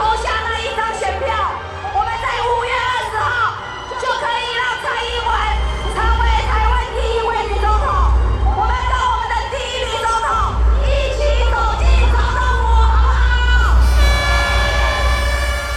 Taipei, Taiwan - Election party
Election party, The speech of the opposition, Rode NT4+Zoom H4n